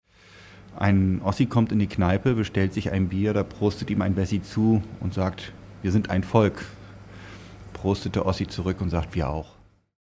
schattin - im waldhotel
Produktion: Deutschlandradio Kultur/Norddeutscher Rundfunk 2009
Lüdersdorf, Germany, 8 August 2009, 21:55